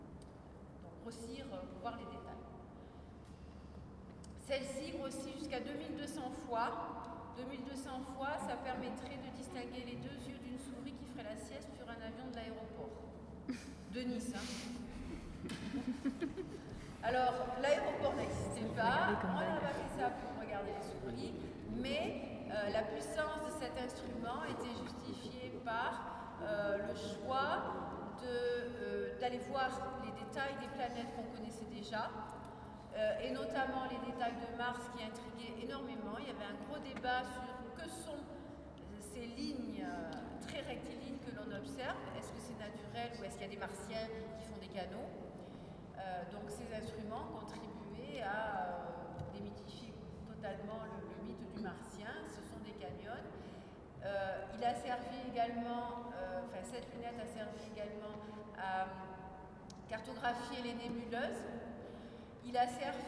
A short excerpt from a tour about the observatory, taken from inside the observatory. Unfortunately the sound of the roof opening did not record very well so is not included, but in this recording you can hear the echoes of the guide's voice.